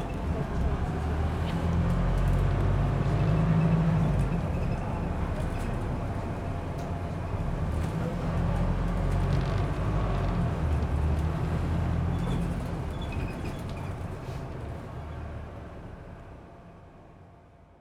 Ditchling Rd, Brighton, Vereinigtes Königreich - Brighton - Ditchling Road - Bus to City
Inside a Brighton City Bus driving into the city center
soundmap international:
social ambiences, topographic field recordings